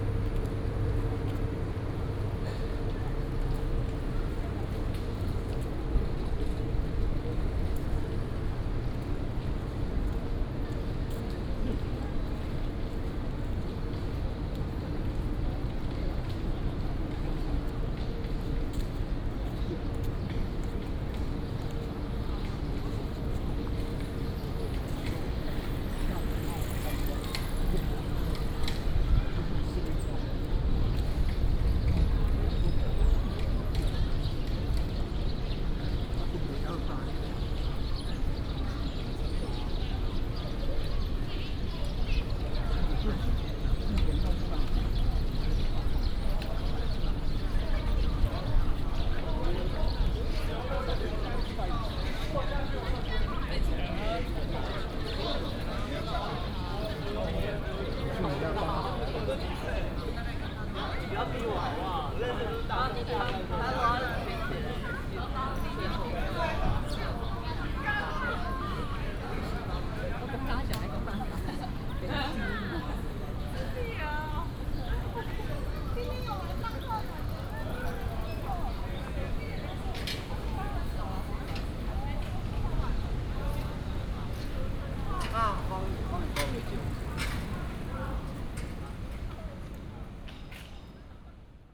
National Taiwan University, Taipei City - walking in the university
Air conditioning noise, bicycle, In the university
Da’an District, Taipei City, Taiwan, 22 February 2016, 11:42